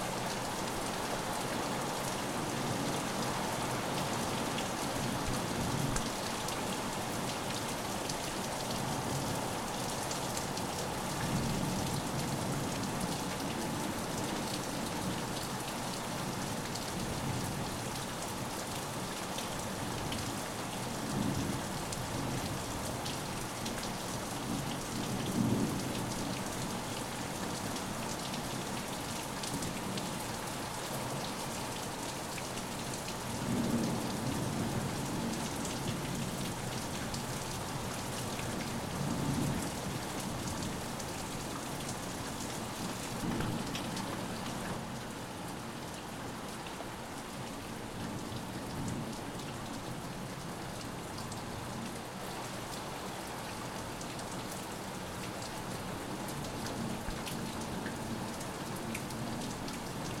School yard
Passing Rainstorm- classified as Severe storm with wind gusts of 50 knts.
Recording made under shelter as storm passes and winds are decreasing.
Note wind gusts
FL, USA, 15 June 2014, ~15:00